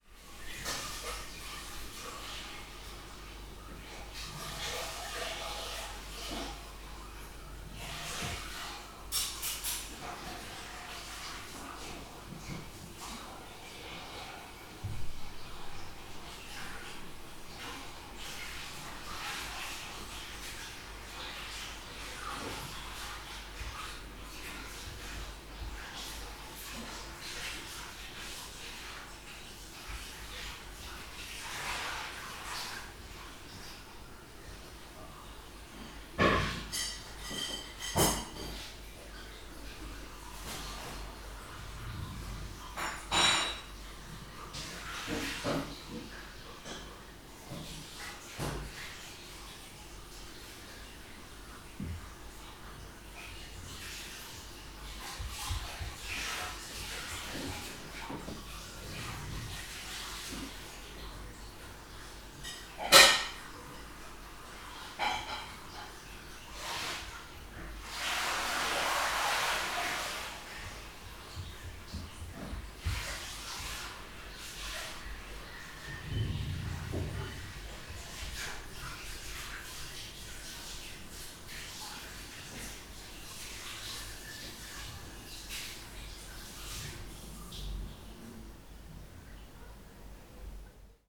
Poznan, Mateckiego Street - dish washing
dish washing, recorded from an other room.